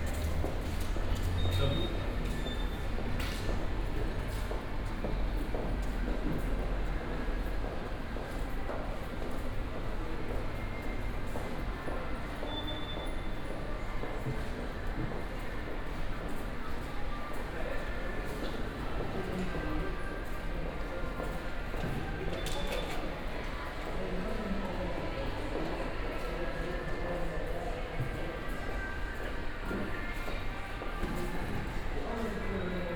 pasio del puerto, Valparaíso, Chile - passage walk, ambience
Valparaiso, passage to the harbour and train station, ambience, short walk
(Sony PCM D50, OKM2)